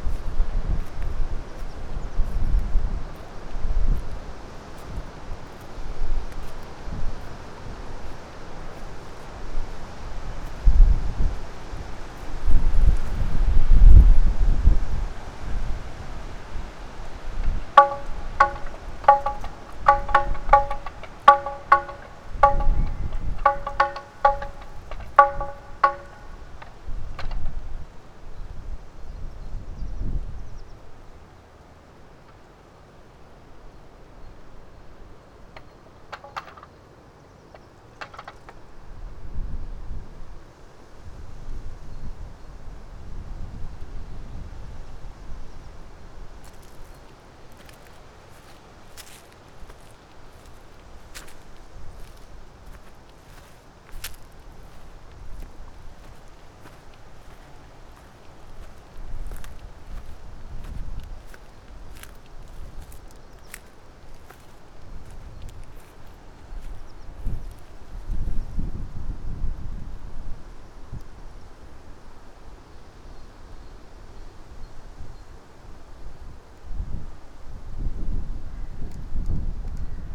while listening to winds through the early spring forest, wind rattle started to turn ...
22 March 2014, 13:44